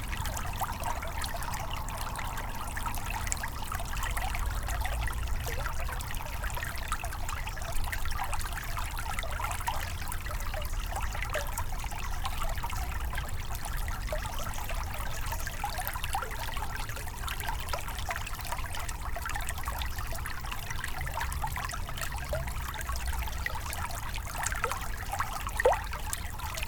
Court-St.-Étienne, Belgique - A river
A small river, called "Le Ry d'Hez".